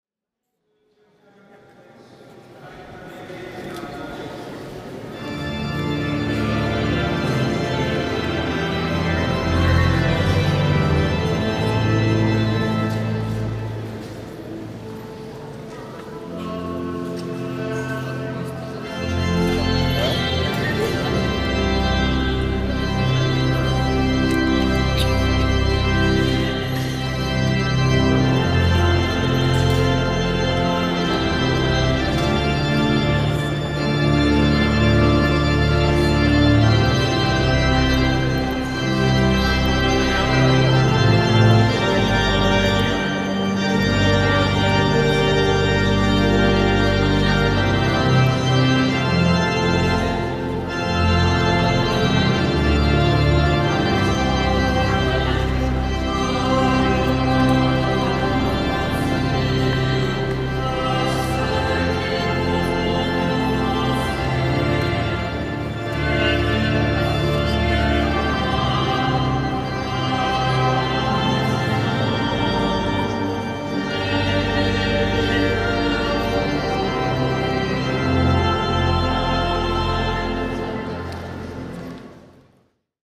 Fragment of a mass in de Cathédrale de Notre Dame (4). Binaural recording.